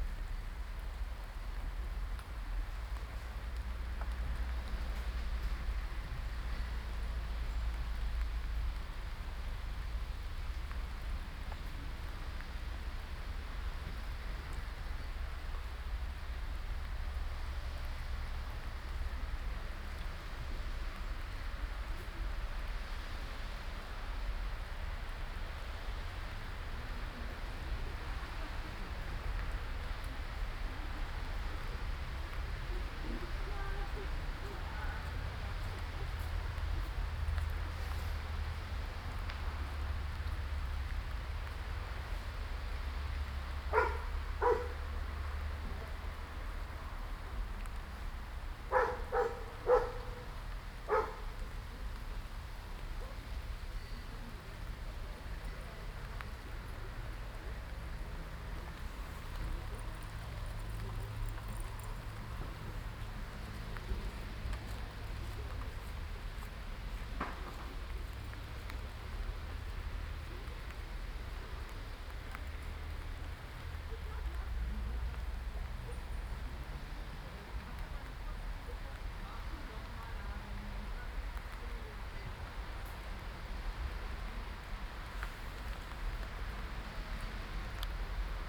berlin, schwarzer kanal, people - berlin, schwarzer kanal, rain, people
rain, dogs people, binaural recording